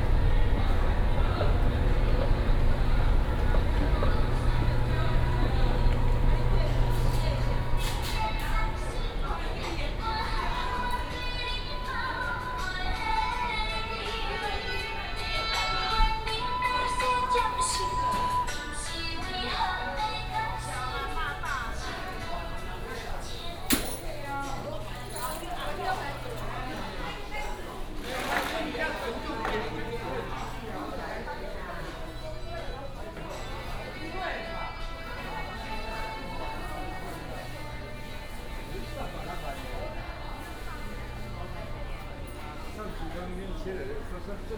新竹市公有竹蓮零售市場, Hsinchu City - Walking in the market inside
Walking in the market inside